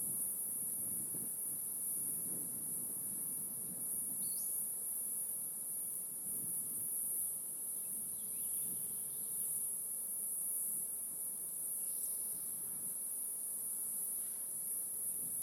SMIP RANCH, D.R.A.P., San Mateo County, CA, USA - Forest Road I
I took an afternoon walk. Attempted to walk the whole trail but got lost. Recording on the "forest road" by Dialogue on the start of my trip.